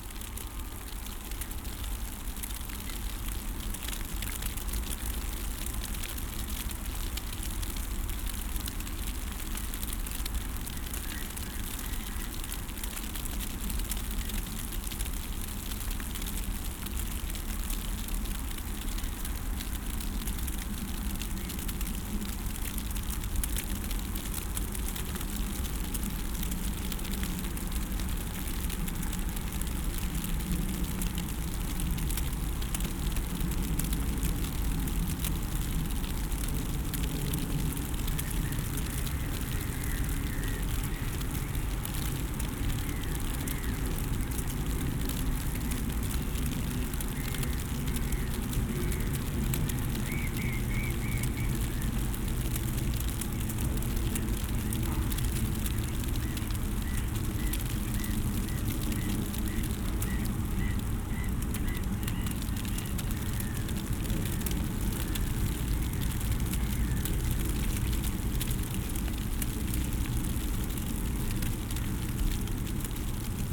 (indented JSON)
{
  "title": "Utena, Lithuania, under the umbrella",
  "date": "2021-04-01 16:30:00",
  "description": "strangely, 1st April, snow is falling down and I standing under the umbrella with ambisonic headset listening to quarantine town...",
  "latitude": "55.51",
  "longitude": "25.59",
  "altitude": "113",
  "timezone": "Europe/Vilnius"
}